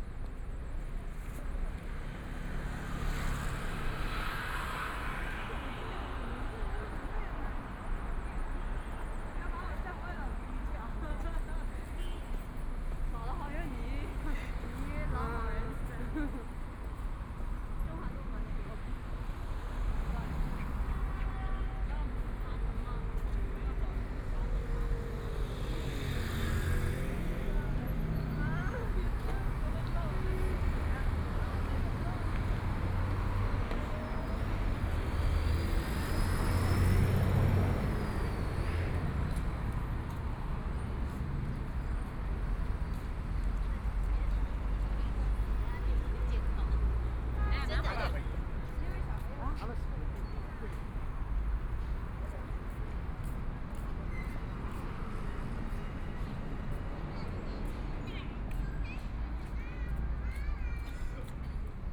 Fuxing Road, Shanghai - At intersection
Walking on the road, Pedestrians, Traffic Sound, Binaural recording, Zoom H6+ Soundman OKM II
Shanghai, China